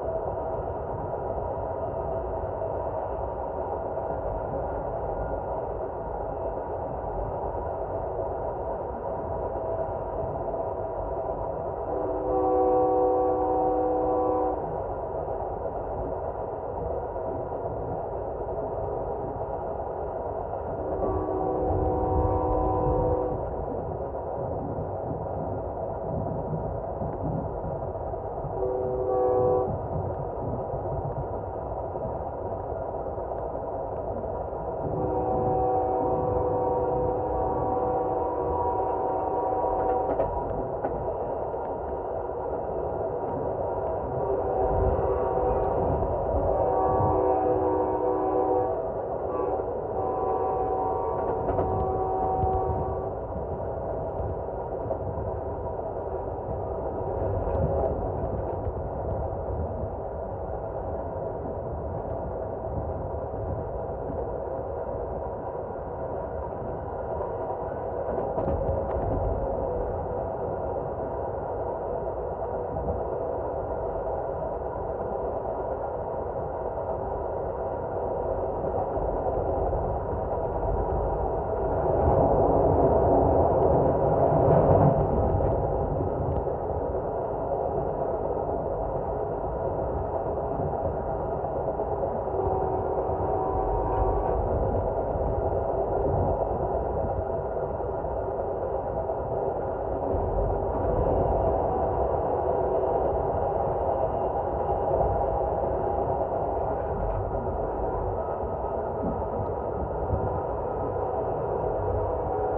In-Route, Texas Eagle, TX, USA - Texas Eagle Amtrak, Contact Mics on Window
Recorded with a pair of JrF contact mics and a Marantz PMD661.